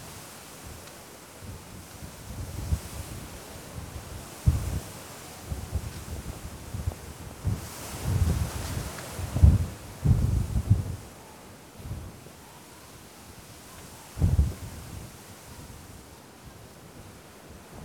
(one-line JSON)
{"title": "Palmier, collège de Saint-Estève, Pyrénées-Orientales, France - Vent dans le palmier", "date": "2011-03-17 15:10:00", "description": "Preneuse de son : Gwenaëlle", "latitude": "42.71", "longitude": "2.84", "altitude": "45", "timezone": "Europe/Paris"}